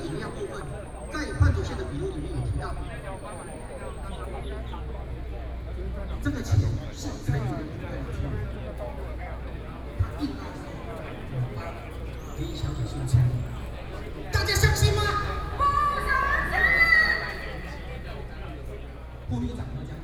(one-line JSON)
{"title": "Linsen S. Rd., Taipei City - Protest Speech", "date": "2013-10-10 10:48:00", "description": "Processions and meetings, Binaural recordings, Sony PCM D50 + Soundman OKM II", "latitude": "25.04", "longitude": "121.52", "altitude": "12", "timezone": "Asia/Taipei"}